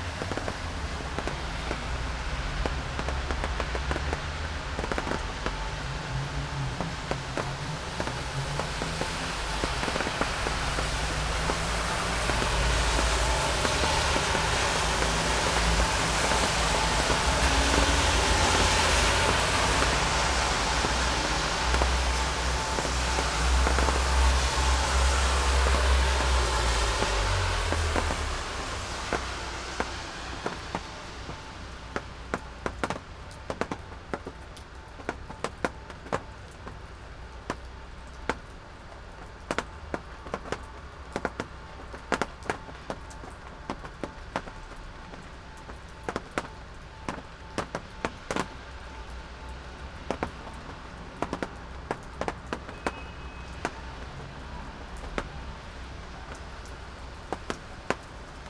old blue plastick roof, Partizanska cesta, Maribor - rain
after heavy grey clouds were hanging above the city from morning onwards, evening brought rain